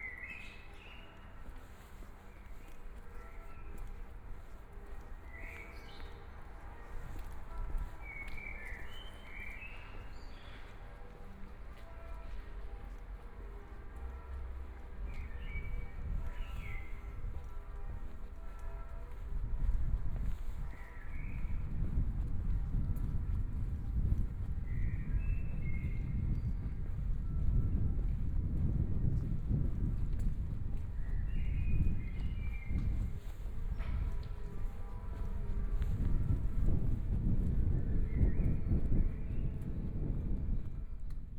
schönfeldstraße, Munich 德國 - Bells and birdsong
walking in the Street, Bells and birdsong
Munich, Germany, 11 May